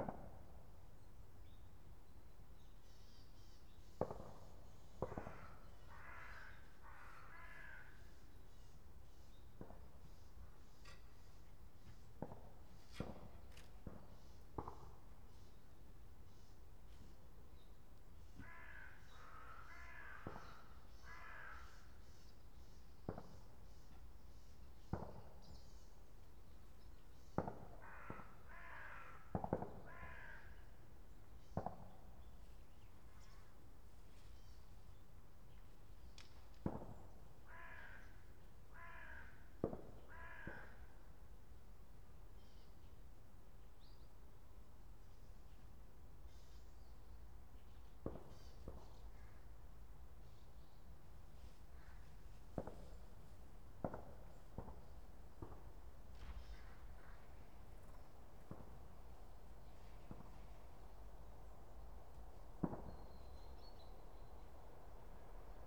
Petrašiūnai, Lithuania, churchyard
monastery churchyard. a little bit normalised file. very silent place in itself, but there was some shooting in the distance...
Kaunas, Lithuania, 8 August 2015, ~12:00